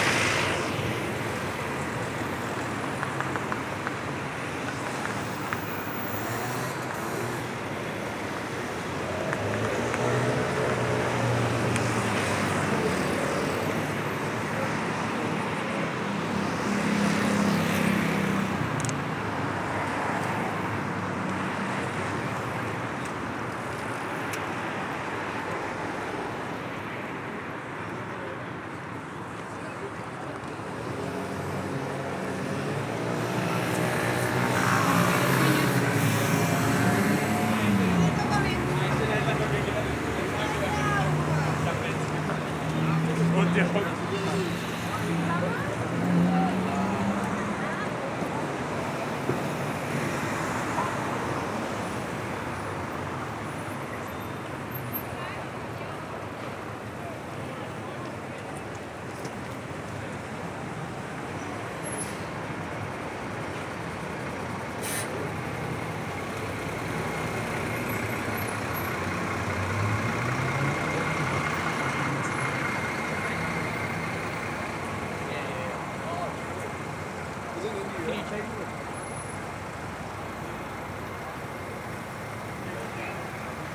Paris, France - The restaurants street
Traveling through Paris, we made a walk into the Huchette street. It's full of tourists. Every restaurant is a tourist trap. Trafic noise into the rue Saint-Jacques, tourists talking into the rue de la Huchette, some scammers saying they are the best restaurant and at the end, trafic noise of the Boulevard Saint-Michel.